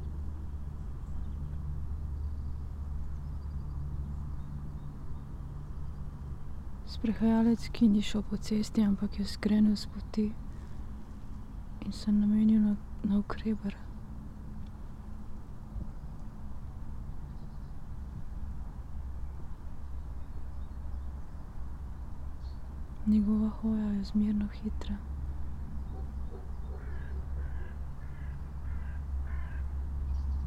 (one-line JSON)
{"title": "tree crown poems, Piramida - opazovalka z drevesa", "date": "2013-03-23 17:13:00", "description": "spoken words, coldness and grayness", "latitude": "46.57", "longitude": "15.65", "altitude": "373", "timezone": "Europe/Ljubljana"}